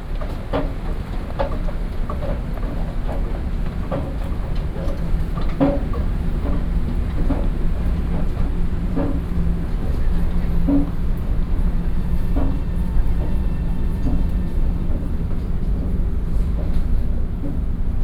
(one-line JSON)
{"title": "Banqiao Station, New Taipei City, Taiwan - walking in the Station", "date": "2012-06-20 12:34:00", "description": "From the station platform, Take the escalator, Towards the exit\nSony PCM D50+ Soundman OKM II", "latitude": "25.01", "longitude": "121.46", "altitude": "20", "timezone": "Asia/Taipei"}